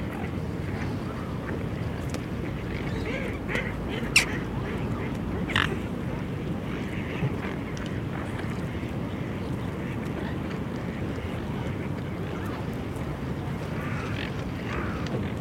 birds on the frozen sea, Tallinn
small water holes open on the frozen sea attracting birds of every kind
Tallinn, Estonia, 9 March, 11:00